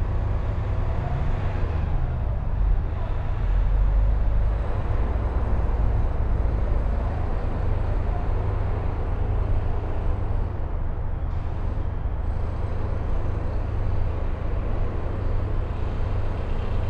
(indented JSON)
{
  "title": "Crossland Rd, Reading, UK - Reading Quaker Meeting House Graveyard",
  "date": "2017-11-08 12:40:00",
  "description": "A ten minute meditation in the graveyard behind the Quaker Meeting House in Reading. (Sennheiser 8020s spaced pair with SD MixPre6)",
  "latitude": "51.45",
  "longitude": "-0.97",
  "altitude": "44",
  "timezone": "Europe/London"
}